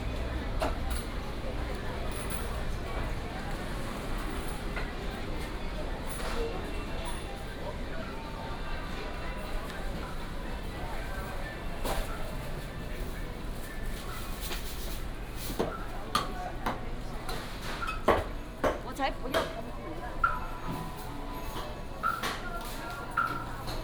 臺中公有東光市場, Beitun Dist., Taichung City - walking through the market
Walking through the market, Buddhist monk
22 March 2017, ~10:00